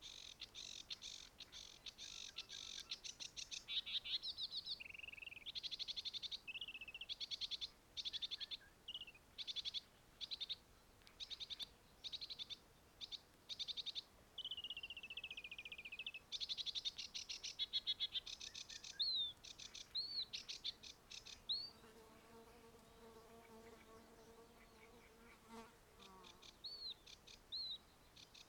Lithuania, at Gimziskiai mound, wind drama
bush at the lake, some singing birds and then gust of wind arises...
30 May, 15:15